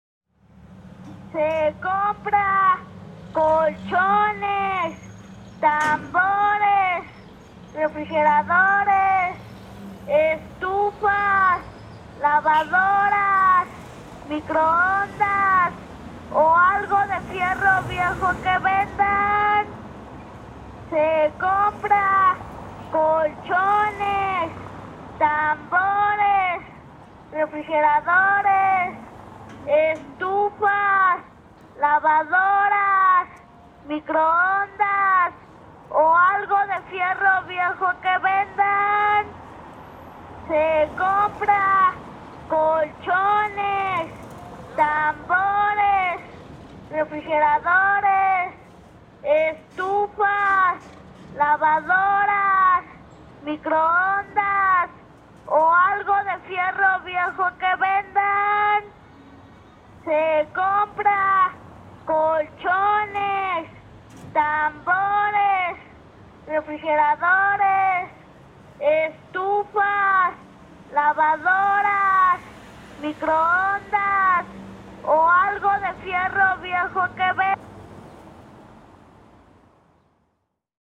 {"title": "Av 9 Pte, Centro histórico de Puebla, Puebla, Pue., Mexique - Puebla \"Lavadores\"", "date": "2021-11-22 11:35:00", "description": "Puebla - Mexique\n\"Lavadores....\" - Ils parcourent la ville pour récupérer les encombrants", "latitude": "19.04", "longitude": "-98.20", "altitude": "2154", "timezone": "America/Mexico_City"}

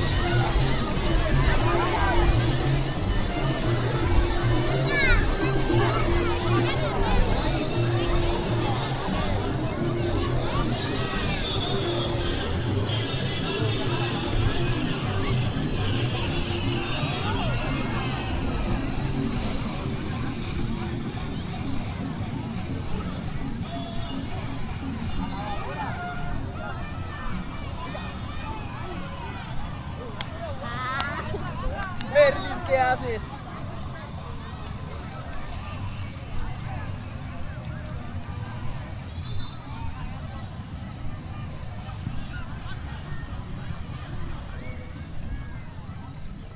{"title": "Mompox (Bolívar-Colombia), La Playa", "date": "2010-01-16 16:37:00", "description": "En verano el Rio Magdalena baja sus caudales y se forma esta playa, donde toda la comunidad sale a disfrutar del rio, de la música, la algarabía, la familia.", "latitude": "9.23", "longitude": "-74.42", "altitude": "12", "timezone": "America/Bogota"}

